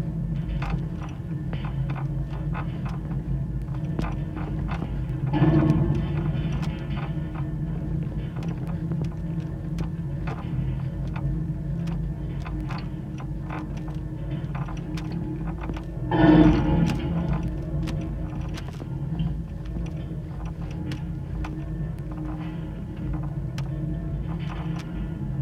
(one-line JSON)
{"title": "waterski machine cable, Vienna", "date": "2011-08-12 12:44:00", "description": "contact mics on the stay cable of the waterski machine", "latitude": "48.21", "longitude": "16.43", "altitude": "158", "timezone": "Europe/Vienna"}